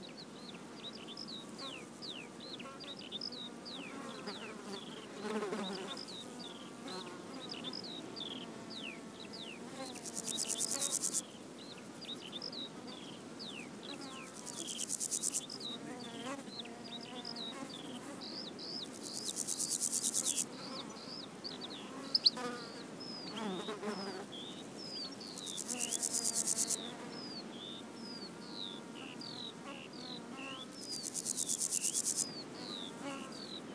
Above Chapmans Pool, S W Coast Path, Swanage, UK - Skylarks Grasshoppers and Bees
I set up the recorder behind a low stone wall, there was a strong wind blowing across the headland and the Skylarks were riding the breeze pouring out their beautiful songs above us. While on the ground there were a myriad of bees, flies and grasshoppers filling the gaps. Sony M10 using the built-in mics and homemade 'fluffy'.
South West England, England, United Kingdom, 25 June